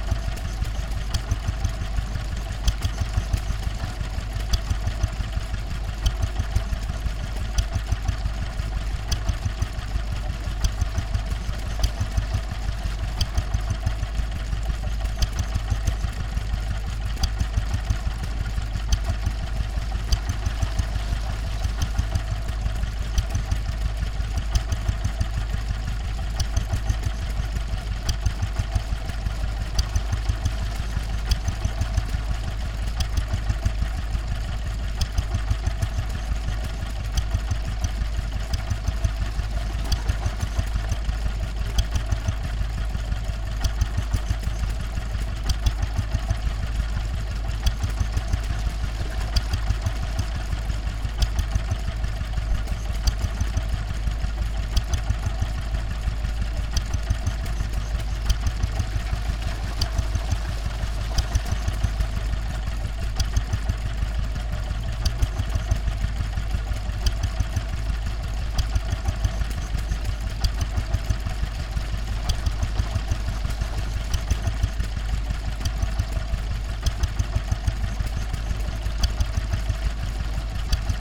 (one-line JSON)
{"title": "Woodcote Steam Rally Oxford Rd, Reading, UK - Old Petrol Water Pump at Woodcote Steam Rally", "date": "2019-07-14 15:34:00", "description": "An old petrol-driven water pump. Sony M10 homemade primo array.", "latitude": "51.54", "longitude": "-1.07", "altitude": "156", "timezone": "Europe/London"}